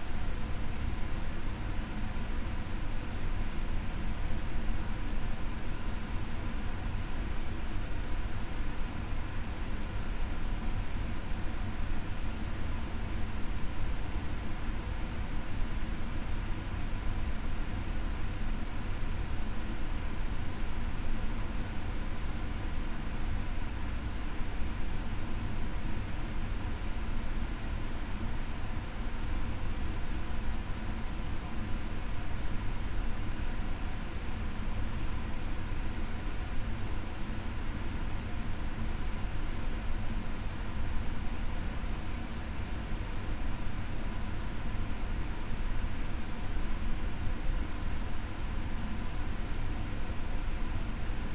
Arboretum Ln, North York, ON, Canada - HVAC in the CTASC Vault
Recorded in the storage vault of the Clara Thomas Archives and Special Collections in the basement of the Scott Library at York University. The only sound is the air ventilation system.